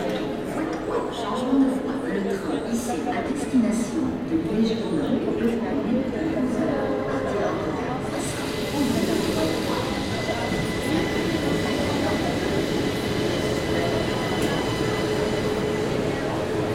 Brussel, Belgium - Brussels Centraal Station
The Brussels Central station, a big underground train station. Walking into the main hall with huge reverb and after, listening to a train leaving the platform 6. This is the busiest station of the world. Only with 6 tracks, a train every 20 seconds in business day and rush hour.
25 August